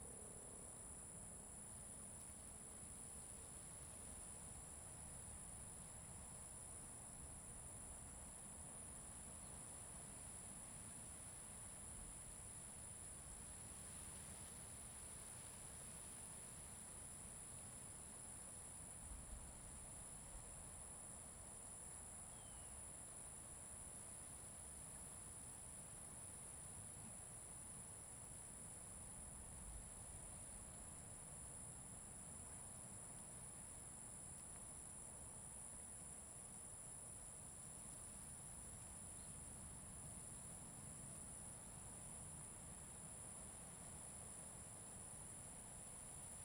青青草原, Koto island - Prairie sea
Prairie sea, Sound of the waves, Environmental sounds
Zoom H2n MS +XY
Taitung County, Taiwan, 29 October 2014, ~16:00